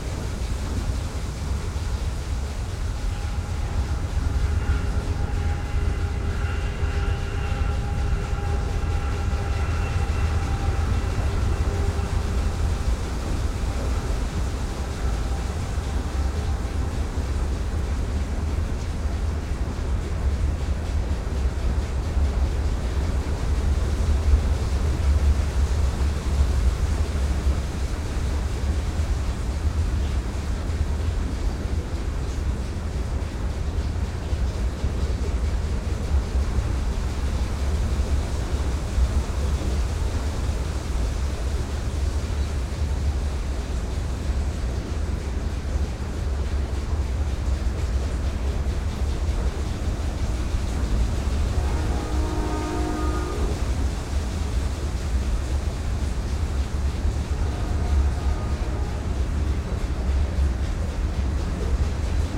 northville, mi, waterwheel
northville, michigan waterwheel at historic ford valve plant